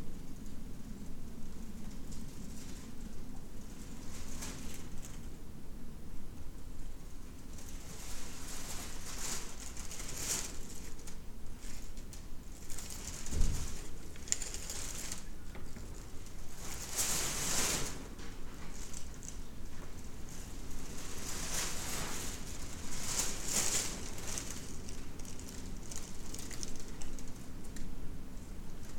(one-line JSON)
{"title": "Libertava, Lithuania, in abandoned mansion", "date": "2019-10-27 12:40:00", "description": "abandoned wooden mansion. it's already dangerous to walk inside. roof is half falled down, so the walls. some ambience on the stairs leadng to the second floor...", "latitude": "55.35", "longitude": "25.15", "altitude": "131", "timezone": "Europe/Vilnius"}